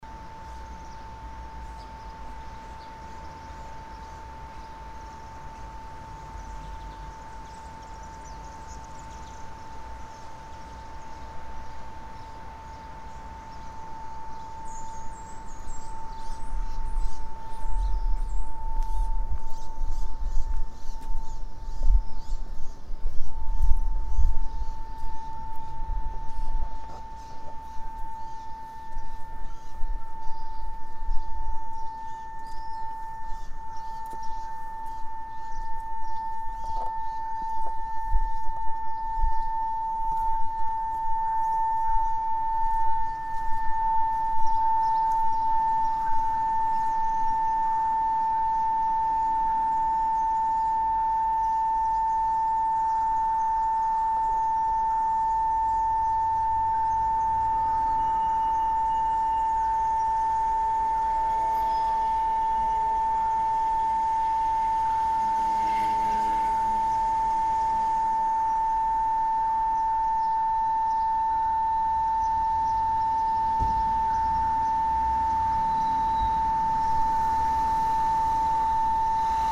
Perugia, Italy - feedback of the doorbell of don bosco hospital
feedback of a doorbel, traffic
23 May 2014